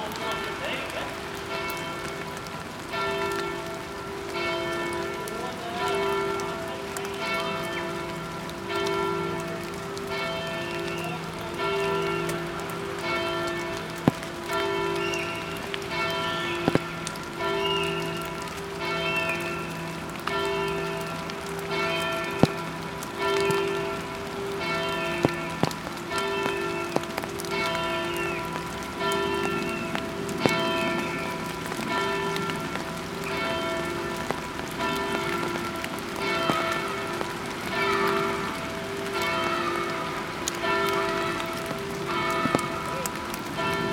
Recorded under an umbrella from above up on the hill.
Light rain.
Tech Note : Sony PCM-D100 internal microphones, wide position.